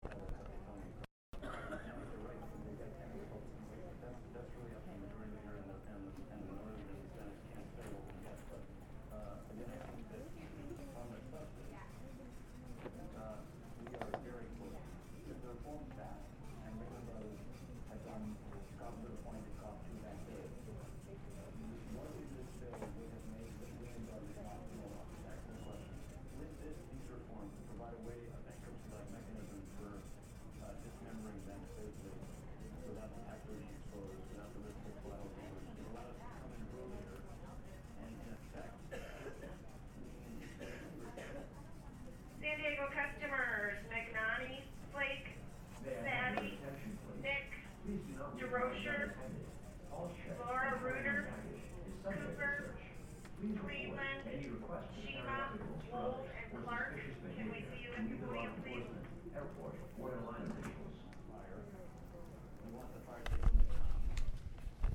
waiting at the airport
2010 04 25 waiting in the denver airport for a flight to san francisco.